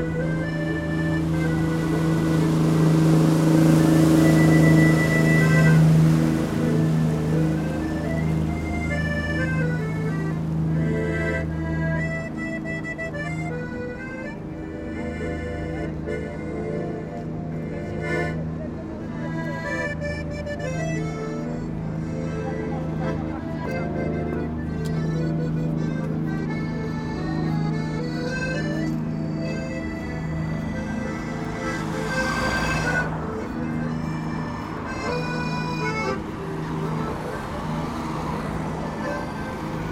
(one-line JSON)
{"title": "Pont d'Arcole, Paris, France - Accordion", "date": "2016-09-23 16:00:00", "description": "A very young tramp is playing accordion on the pont d'Arcole.", "latitude": "48.86", "longitude": "2.35", "altitude": "30", "timezone": "Europe/Paris"}